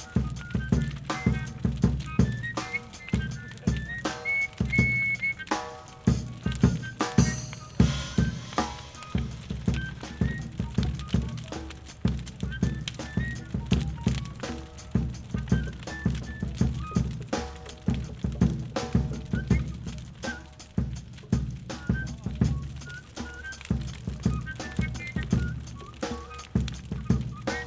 open air festival TAYBOLA: live drums + big fire
Recorded on Rode NTG-2 + Zoom H4n.
фестиваль ТАЙБОЛА: живые барабаны и большой костер, на берегу моря